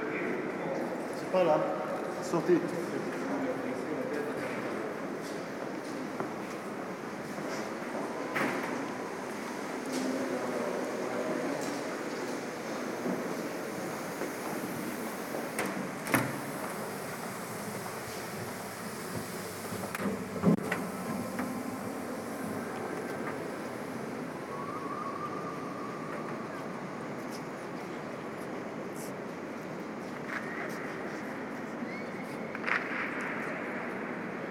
Inside Leviathan (Anish Kapoors installation for Monumenta 2011)
Walking into the monster, people clapping and whistling to investigate (non)echoes.
Paris, France, 16 May 2011, ~18:00